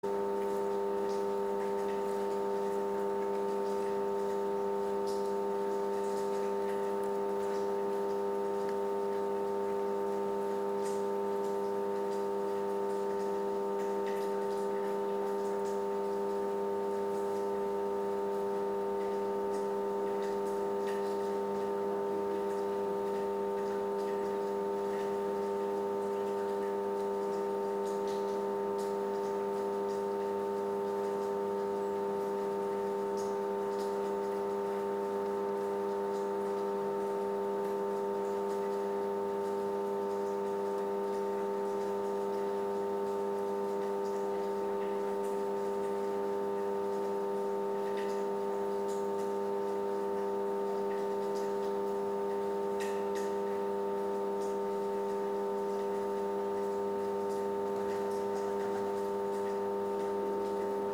Via Cavallotti, Pavia, Italy - The Silent City II - Electric Generator noise
Electric generator noise recorded in the streed from a gate at the floor level. rain in background.